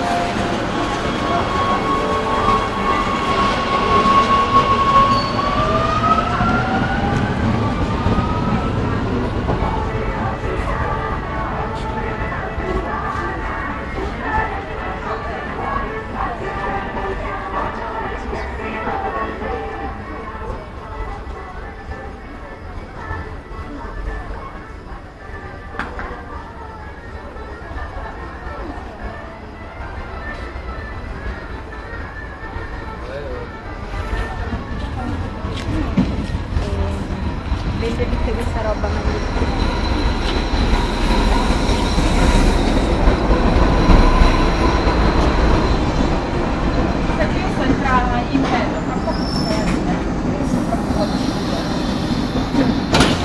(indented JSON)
{
  "title": "Roma, Stazione Metro B Piramide",
  "date": "2010-08-11 08:00:00",
  "description": "Rome, subway, line B, station Piramide. Travel from Piramide to Circo Massimo",
  "latitude": "41.88",
  "longitude": "12.48",
  "altitude": "14",
  "timezone": "Europe/Rome"
}